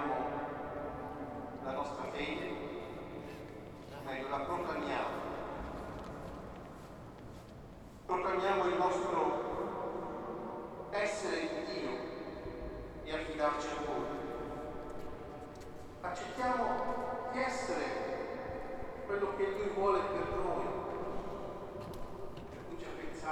Cannaregio, Venice, Itálie - Campo San Marcuola
Liturgy in the San Marcuola on Easter and accordeonist in front of the Church
Venezia, Italy